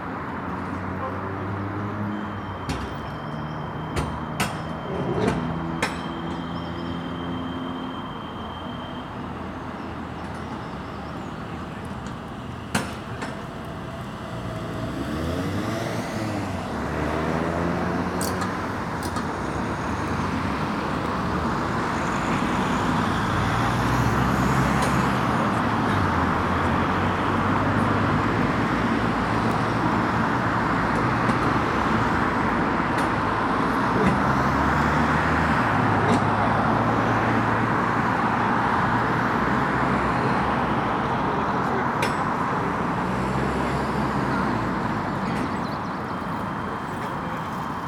Contención Island Day 48 inner southeast - Walking to the sounds of Contención Island Day 48 Sunday February 21st
Snatches of talk as people run
walk
and wait to cross
Fathers push buggies
of sleeping babies
The runners wait
check their time
hands on knees
Jackdaws explore
a chimney